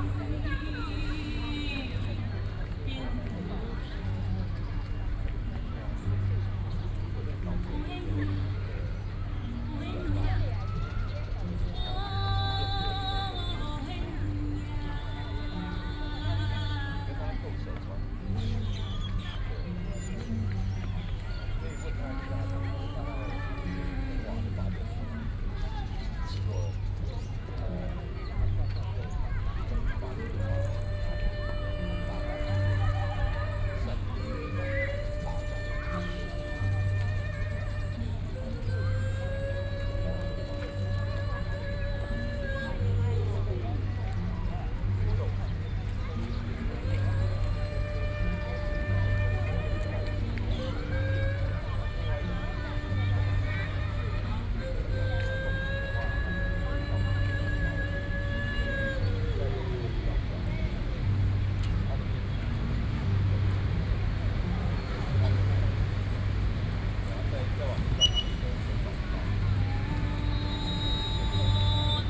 Opposed to nuclear power plant construction, Hakka song performances, Binaural recordings, Sony PCM D50 + Soundman OKM II
中正區 (Zhongzheng), 台北市 (Taipei City), 中華民國, 17 May 2013